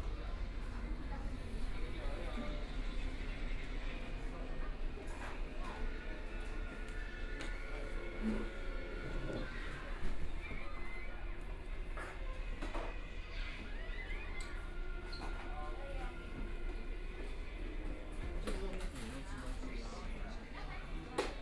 中山區永安里, Taipei city - soundwalk
Walking on the road, Then enter the restaurant, Traffic Sound, Binaural recordings, Zoom H4n+ Soundman OKM II